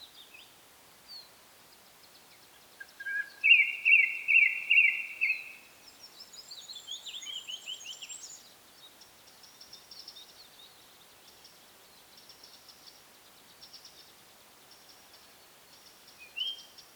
Is Blair witching around here?